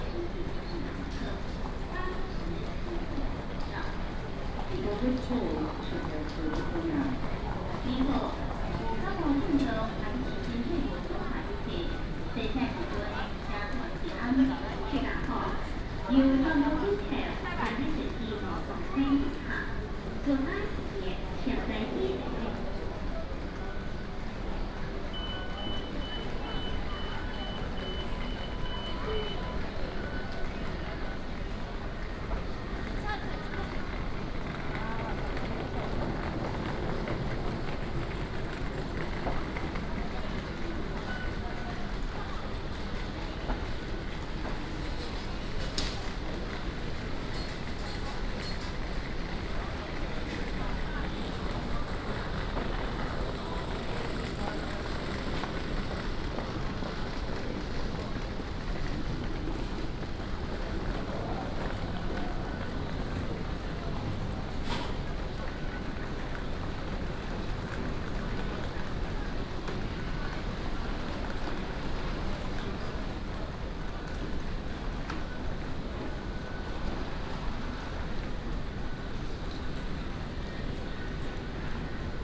Taipei, Taiwan - Transhipment hall
Transhipment hallㄝ at the station
中正區 (Zhongzheng), 台北市 (Taipei City), 中華民國, May 2016